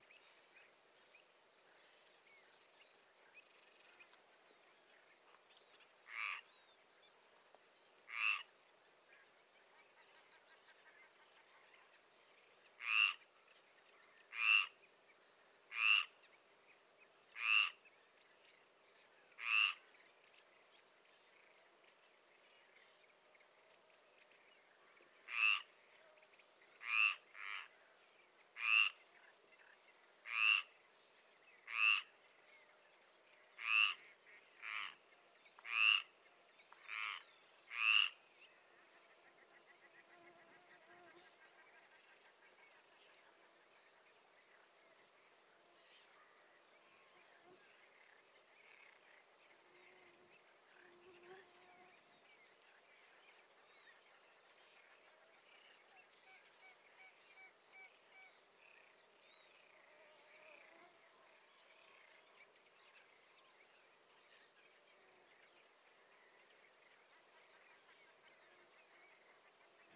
Marais poitevin, France - Swamp calm evening landscape, frog
Entre les champs et les marécages des oiseaux, une grenouille et des insectes.
Between the swamps and the fields, some birds, insect, a frog and a mosquito.
/zoom h4n intern xy mic